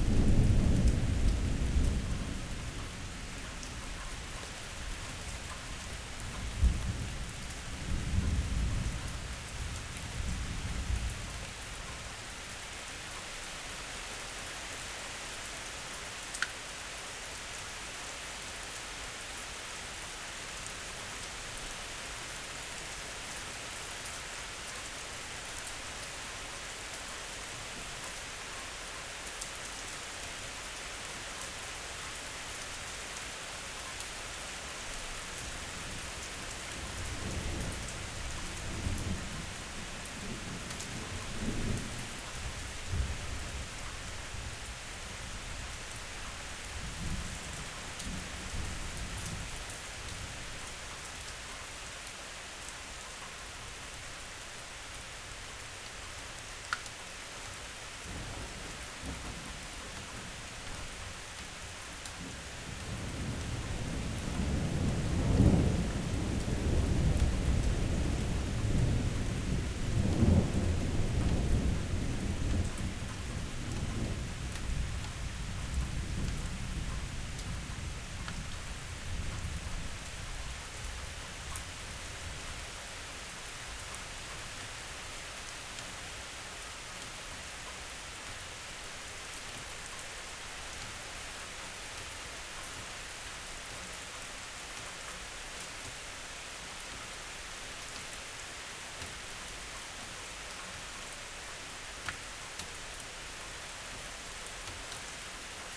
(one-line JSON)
{"title": "Berlin Bürknerstr., backyard window - rain and thunder", "date": "2016-05-30 14:05:00", "description": "a light thunderstorm arrives\n(Sony PCM D50, Primo EM172)", "latitude": "52.49", "longitude": "13.42", "altitude": "45", "timezone": "Europe/Berlin"}